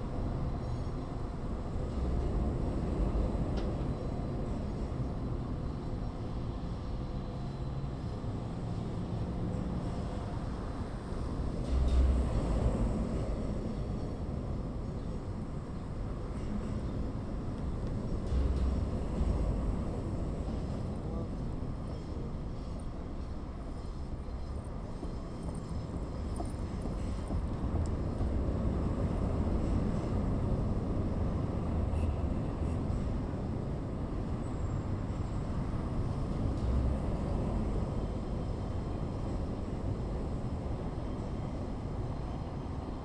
{"title": "Kinzie St. bridge, Chicago IL - Kinzie St. bridge, Chicago River, North Branch", "date": "2009-08-21 17:26:00", "latitude": "41.89", "longitude": "-87.64", "altitude": "175", "timezone": "America/Chicago"}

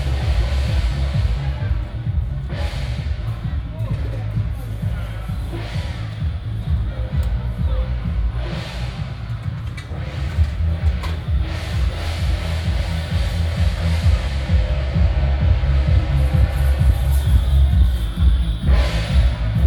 {"title": "Daren St., Tamsui Dist., New Taipei City - walking in the Street", "date": "2017-04-16 10:13:00", "description": "Traditional temple festivals, Firecrackers sound, temple fair", "latitude": "25.17", "longitude": "121.44", "altitude": "45", "timezone": "Asia/Taipei"}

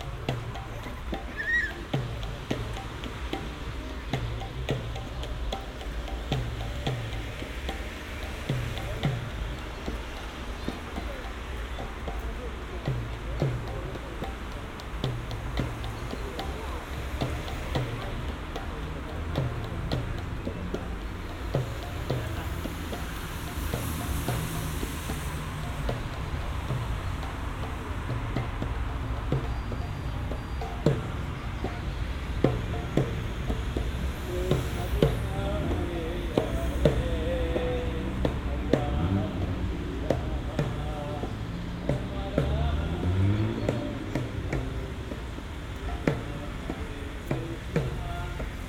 {"title": "Anykščiai, Lithuania, central square hare hare", "date": "2021-06-25 20:00:00", "description": "a pair of krishnaists in a central square of little town", "latitude": "55.53", "longitude": "25.10", "altitude": "78", "timezone": "Europe/Vilnius"}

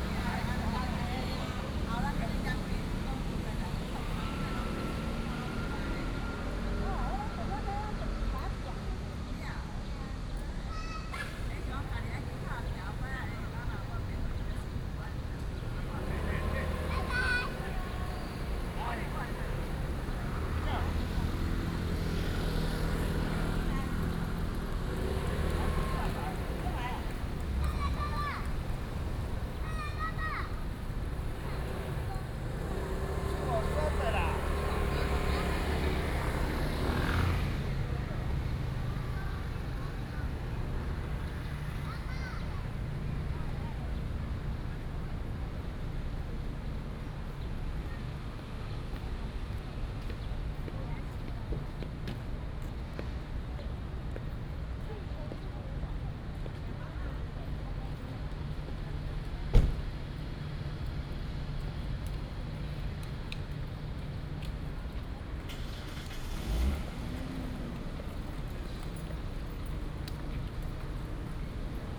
{"title": "Aly., Ln., Sec., Wenhua Rd., Banqiao Dist., New Taipei City - Sitting in the street", "date": "2015-07-29 16:46:00", "description": "Sitting in the street, Traffic Sound, The elderly and children", "latitude": "25.03", "longitude": "121.47", "altitude": "21", "timezone": "Asia/Taipei"}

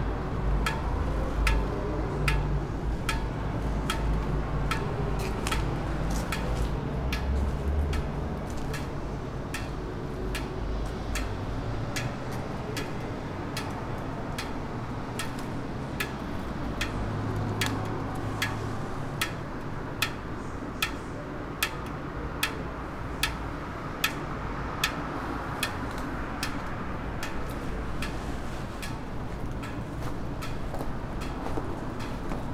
{"title": "lekarniška ulica, maribor - drops, bats, steps", "date": "2014-08-14 21:25:00", "latitude": "46.56", "longitude": "15.65", "altitude": "272", "timezone": "Europe/Ljubljana"}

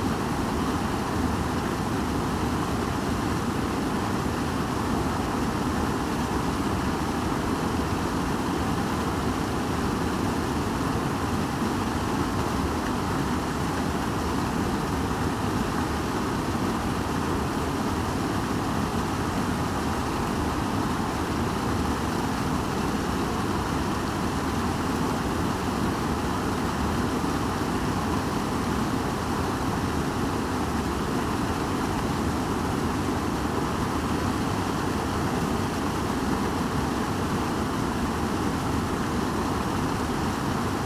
{"date": "2021-02-01 17:14:00", "description": "Creek Koprivnica concrete man-made waterfall. Recorded with Zoom H2n (MS, on a tripod) from close, directly towards the waterfall.", "latitude": "46.16", "longitude": "16.82", "altitude": "138", "timezone": "Europe/Zagreb"}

